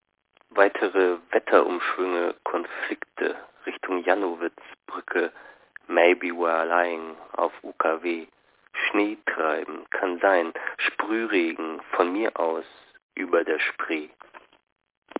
droschkend zu dir (4) - droschkend zu dir (4) - hsch ::: 27.03.2007 23:13:53